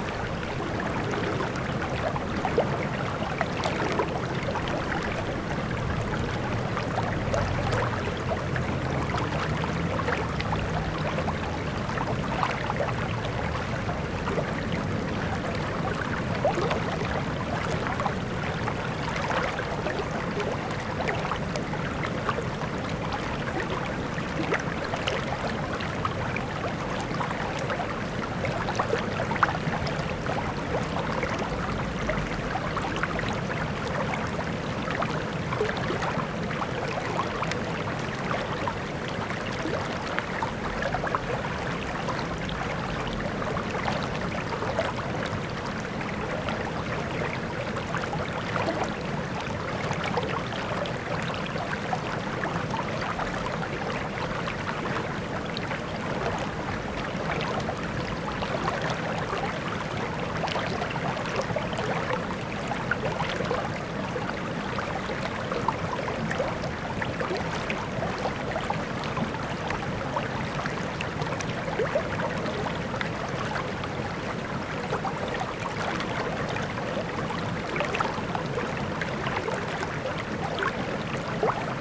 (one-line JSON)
{"title": "Chem. des Teppes, Aix-les-Bains, France - Le Sierroz", "date": "2022-07-30 10:50:00", "description": "ZoomH4npro posé sur une pierre au milieu du Sierroz à son plus bas niveau suite à la sécheresse.", "latitude": "45.70", "longitude": "5.89", "altitude": "239", "timezone": "Europe/Paris"}